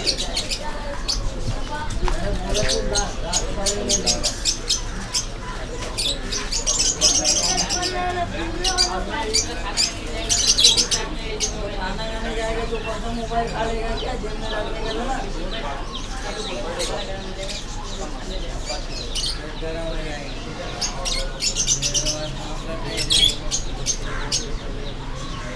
India, Mumbai, jyotiba Phule Market, Crawford pets market, birds